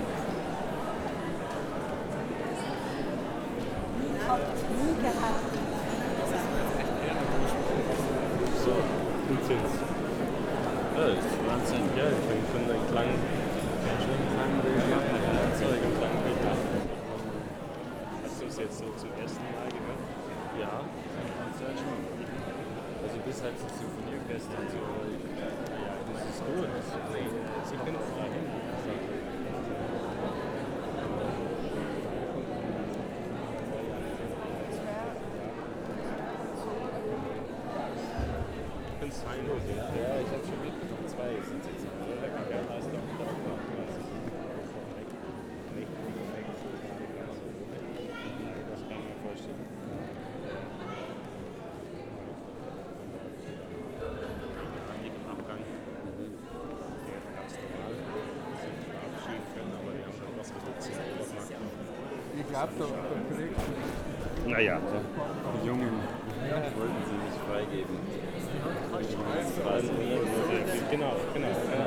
Musikwissenschaft, Karl-Schönherr-Straße, Innsbruck, Österreich - Blasmusikkapelle Mariahilf/St. Nikolaus im Canesianum Teil 3
Canesianum Blasmusikkapelle Mariahilf/St. Nikolaus, vogelweide, waltherpark, st. Nikolaus, mariahilf, innsbruck, stadtpotentiale 2017, bird lab, mapping waltherpark realities, kulturverein vogelweide